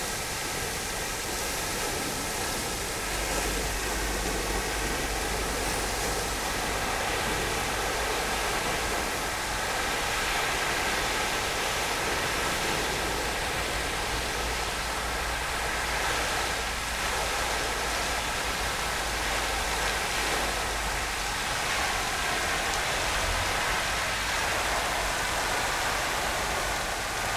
Chatsworth, UK - Emperor Fountain ...
Emperor Fountain ... Chatsworth House ... gravity fed fountain ... the column moves even under the slightest breeze so the plume falls on rocks at the base or open water ... or both ... lavalier mics clipped to sandwich box ... voices and background noises ...
Bakewell, UK, 2 November 2016, 14:00